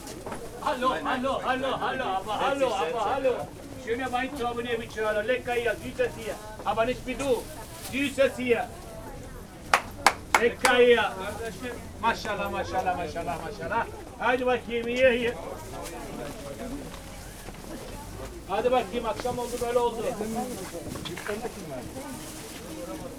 windy spring day, a walk around the market
the city, the country & me: april 12, 2011
Berlin, Germany, 12 April 2011, 17:10